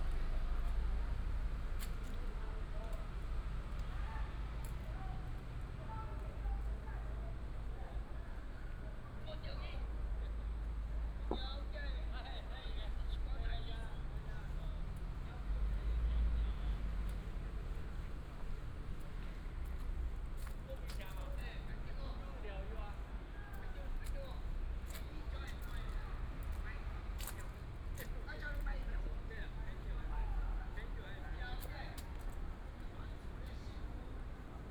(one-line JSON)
{"title": "金山面公園, Hsinchu City - Walking in the park", "date": "2017-09-27 14:48:00", "description": "Walking in the park, Binaural recordings, Sony PCM D100+ Soundman OKM II", "latitude": "24.78", "longitude": "121.02", "altitude": "92", "timezone": "Asia/Taipei"}